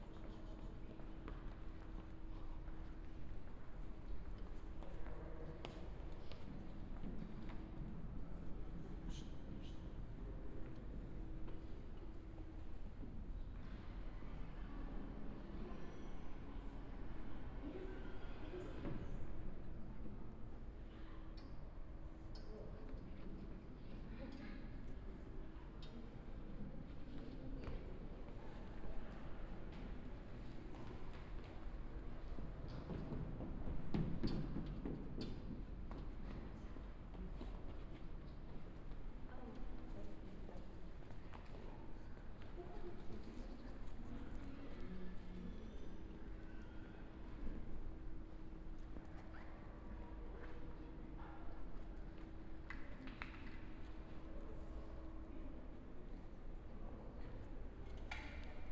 Truro, Cornwall, UK - Inside Truro Cathedral
Footsteps inside Truro Cathedral recorded binaurally.